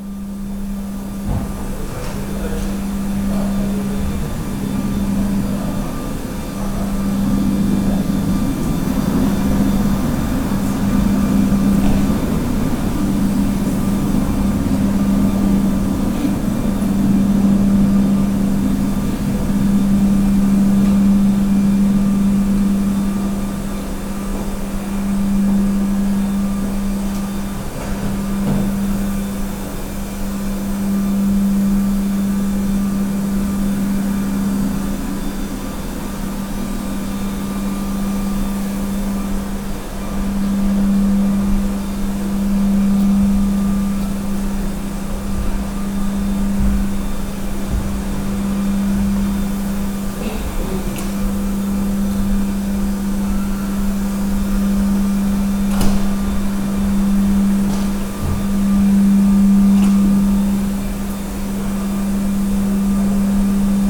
Poznan, Krolowej Jadwigi street, Maraton building - entrance loby of Maraton Office building
floor cleaning machine operating in the loby of Maraton Office building. conversations of office workers going in and out on a patio for a smoke. (roland r-07)
województwo wielkopolskie, Polska, 8 November 2019